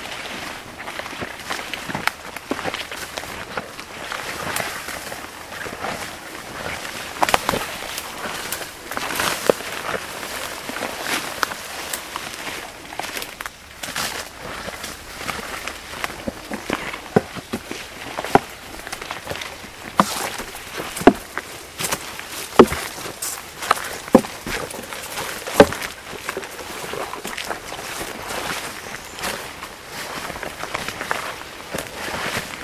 Sounds from hike through small patch of deciduous forest, adjacent to stream and rural road. Recorded mid-afternoon on hot, humid summer day. Among species heard: field sparrow (Spizella pusilla), Cope's gray tree frog (Hyla chrysoscelis). Sony ICD-PX312.